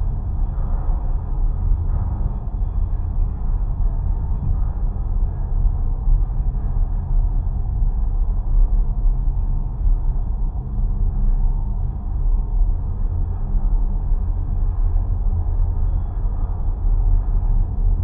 Jannali, NSW, Australia - Transmission tower in a small area of bushland in Jannali
I remember putting my ears against this tower a few months ago and have been wanting to record it since but couldn't as I was waiting for one of my contact microphones to be repaired and to be delivered. There was a problem with the postage and the first microphone never arrived after two months. Another was sent two weeks ago and I finally received it yesterday, along with two XLR impedance adaptors, so I am able to use my contact mics and hydrophones again!
Recorded with two JRF contact microphones (c-series) into a Tascam DR-680.
Kirrawee NSW, Australia, 6 September 2014, 15:00